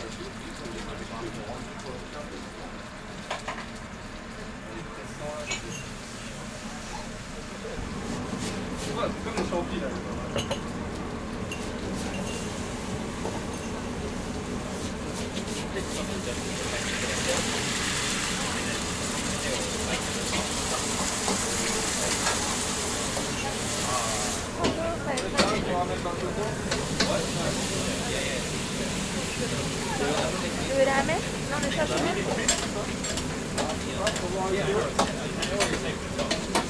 Rue Sainte-Ursule, Toulouse, France - Fufu Ramen
Fufu Ramen Japanese restaurant
captation : ZOOMH6
Occitanie, France métropolitaine, France, January 2022